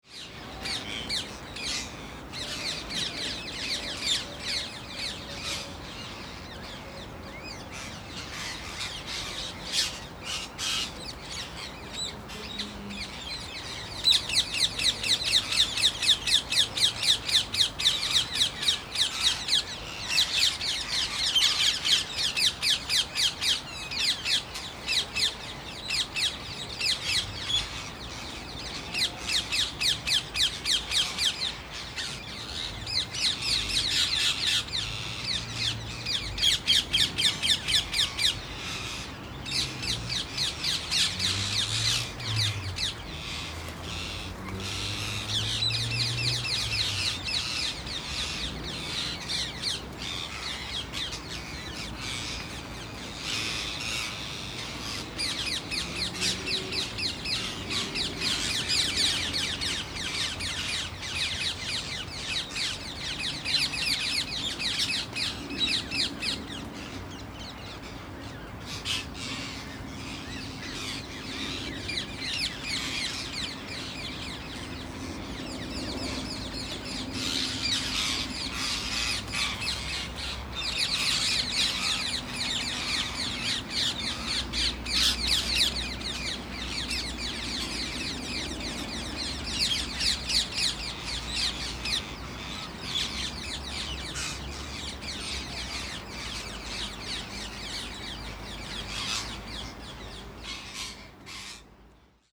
Garden of Remembrance, London Borough of Lewisham, London, UK - Ring-necked Parakeet roost quietening as night falls
As darkness falls the parakeets quieten down. There is still a lot of flying around but less screeching and squawking.
14 August 2013